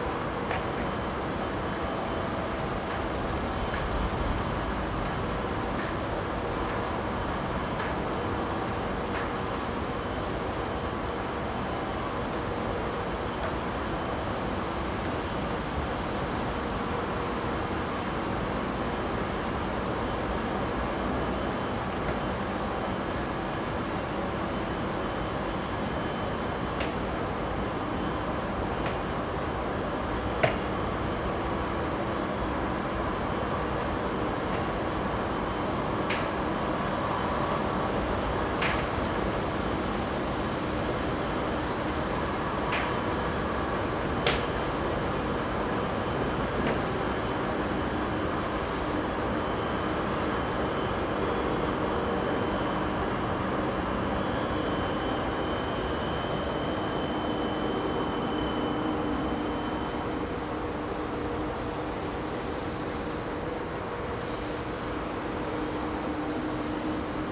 Grevenbroich, Germany - Gantry moving along the coal mountain, cracking as it goes
A lorry passes, the conveyer belts stop, an alarm sounds and one of the huge gantries that straddle the coal mountains very slowly changes position. I am observing this from amongst trees. It is a very windy morning.
2 November 2012, 12:36